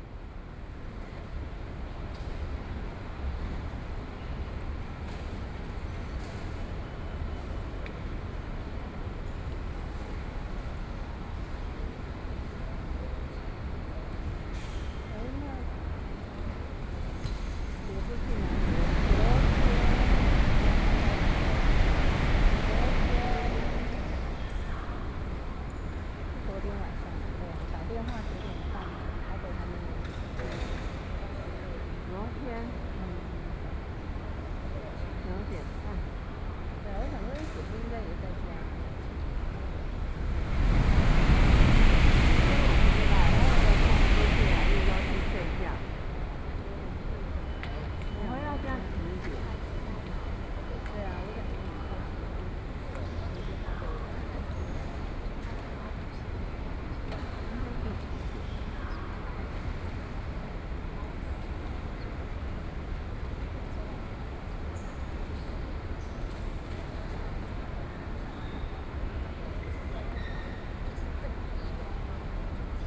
Zhubei City, Hsinchu County - Station hall
Station hall, High-speed rail train traveling through, Sony PCM D50 + Soundman OKM II
新竹縣 (Hsinchu County), 中華民國, 12 May 2013, ~19:00